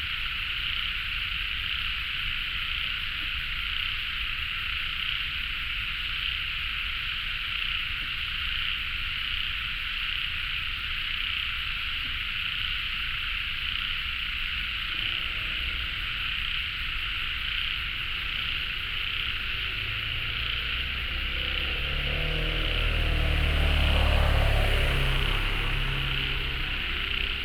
關渡里, Taipei City - Frogs sound
Traffic Sound, Environmental sounds, Birdsong, Frogs
Binaural recordings
Beitou District, 關渡防潮堤, 17 March 2014, 18:56